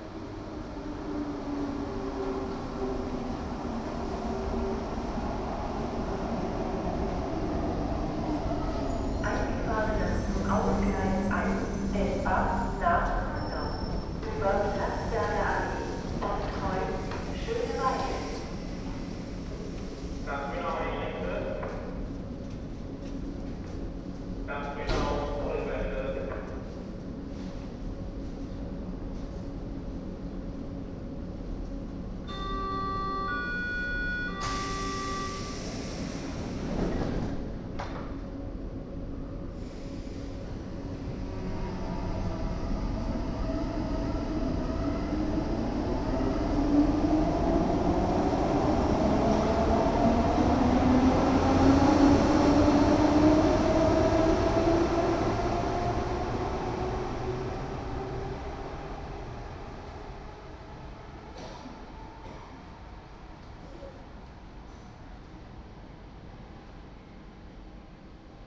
Schönhauser Allee, Berlin, Deutschland - Schönhauser Allee S-Bahn Station
For my multi-channel work "Ringspiel", a sound piece about the Ringbahn in Berlin in 2012, I recorded all Ringbahn stations with a Soundfield Mic. What you hear is the station Schönhauser Allee at noon in June 2012.